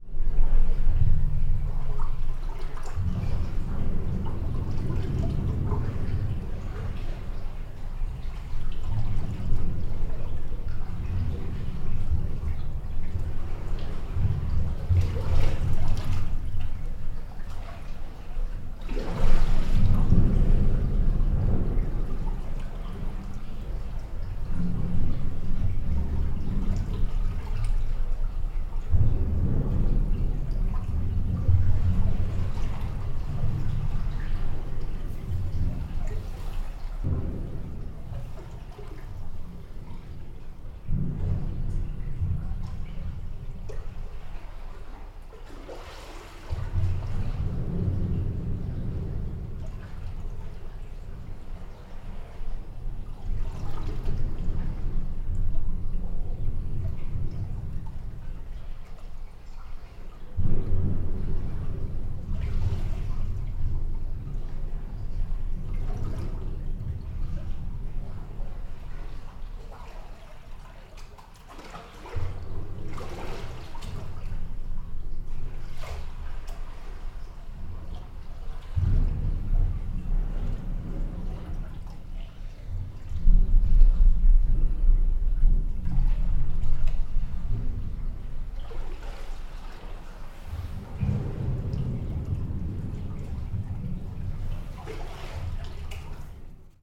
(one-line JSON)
{"title": "Suffolk, Minsmere - sluice gate", "date": "2021-12-19 14:20:00", "description": "Minsmere New Cut carries the waters of the Minsmere River more directly out to the North Sea via this sluice gate.\nMarantz PMD620.", "latitude": "52.24", "longitude": "1.63", "timezone": "Europe/London"}